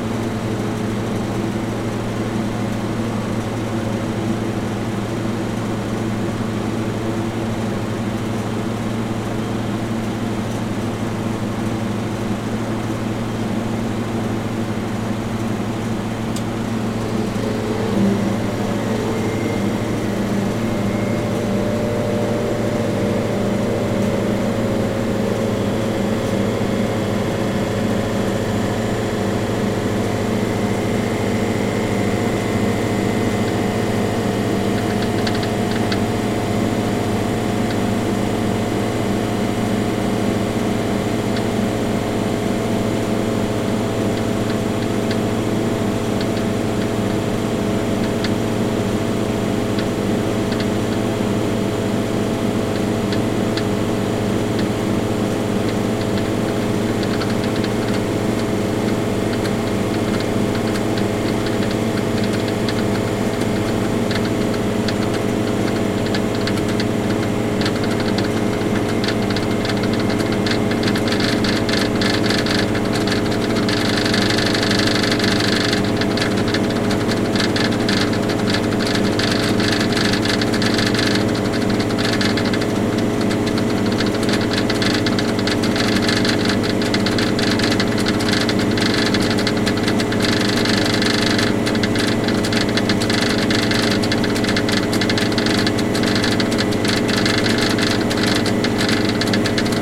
A. Juozapavičiaus pr., Kaunas, Lithuania - Old air conditioning unit
An old air conditioning unit, recorded with ZOOM H5.